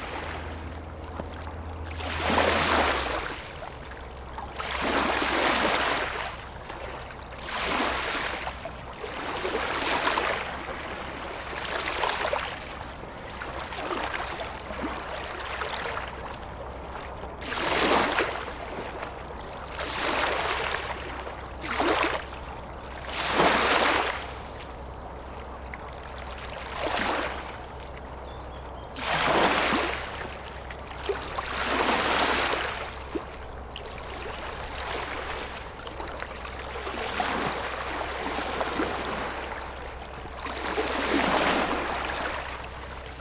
Namur, Belgique - The barge
A barge is passing on the Meuse river. This makes a lot of waves.